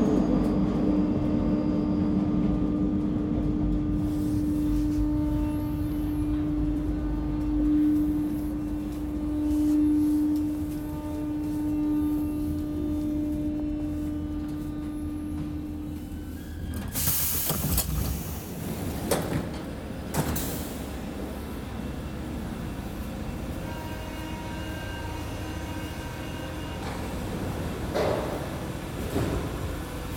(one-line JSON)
{"title": "Cadet, Paris, France - Empty subway durind the covid-19 curfew in Paris", "date": "2021-01-04 22:33:00", "description": "Night ride in an empty subway during the covid-19 curfew on line 7 from Cadet Station to Palais Royal", "latitude": "48.88", "longitude": "2.34", "altitude": "46", "timezone": "Europe/Paris"}